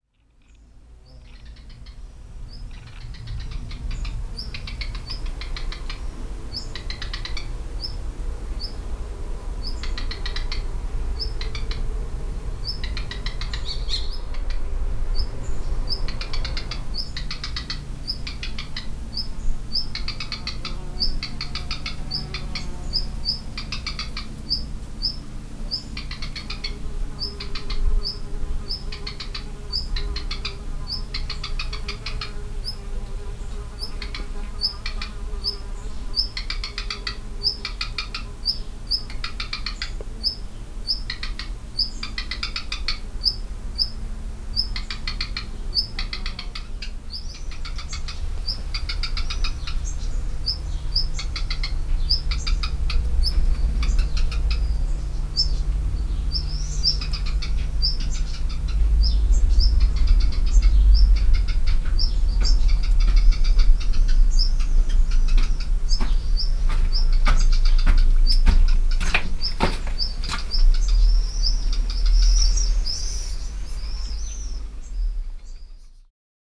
Saint Amand de Coly, little bird